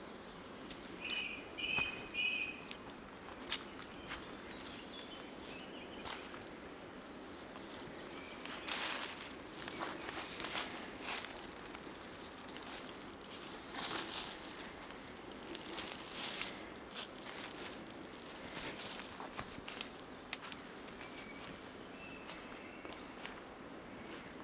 Via Maestra, Rorà TO, Italia - Rorà Soundwalk-220625
Duration: 39'45"
As the binaural recording is suggested headphones listening.
Both paths are associated with synchronized GPS track recorded in the (kmz, kml, gpx) files downloadable here: